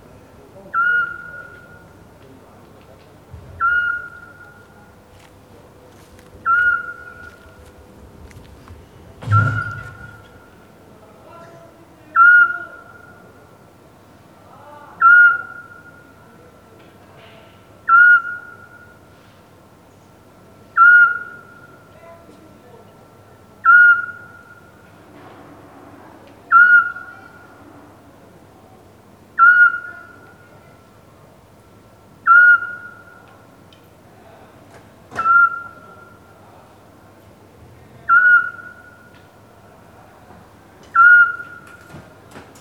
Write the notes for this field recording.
The scops owl is back to the city square and he's in a good shape.